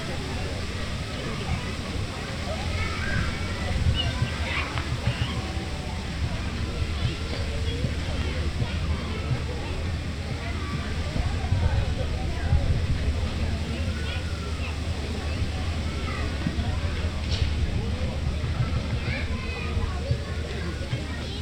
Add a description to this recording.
at the bathing spot, an der badestelle des ziegeleiparks mildenberg; recorded in occasion of the 2015th chaos communication camp, aufgenommen ebenda